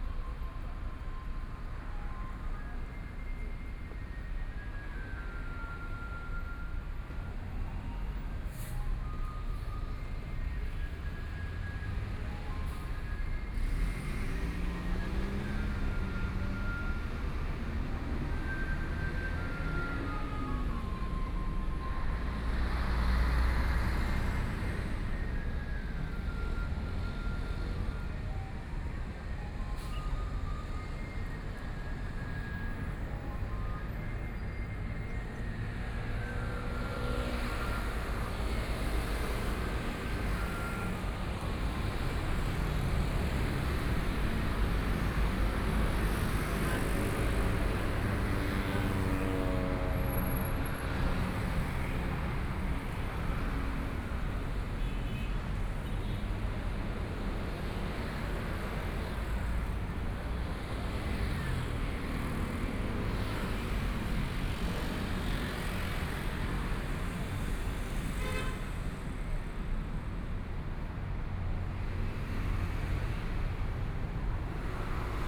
Sec., Minquan E. Rd., Zhongshan Dist. - soundwalk
Walking in the underpass, Environmental sounds, Walking on the road, Motorcycle sound, Traffic Sound, Binaural recordings, Zoom H4n+ Soundman OKM II